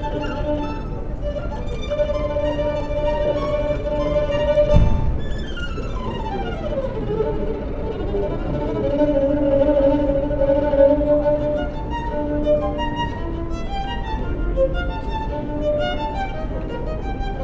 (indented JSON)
{
  "title": "Violinist, High St, Worcester, UK - Violinist",
  "date": "2019-11-01 12:45:00",
  "description": "A violinist plays classical music to shoppers and passers by on the busy High Street.\nMixPre 6 II 2 x Sennheiser MKH 8020s",
  "latitude": "52.19",
  "longitude": "-2.22",
  "altitude": "30",
  "timezone": "Europe/London"
}